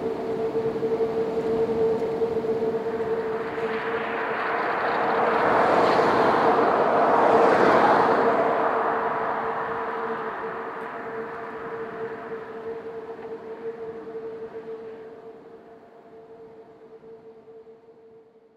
Le vent passant à travers les barrières en métal du pont fait vibrer sa structure ce qui créé cet étrange son envoutant...
The wind passing by the bridge metal structure & fences make it vibrate resulting in this beautiful droning sound...
/zoom h4n intern xy mic